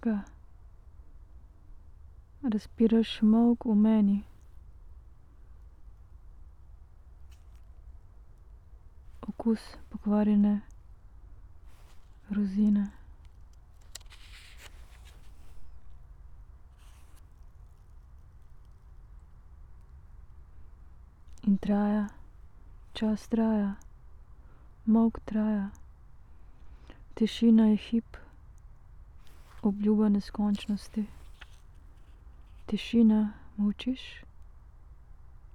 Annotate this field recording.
variation from trieste notebook ... fragment from one hour reading performance Secret listening to Eurydice 11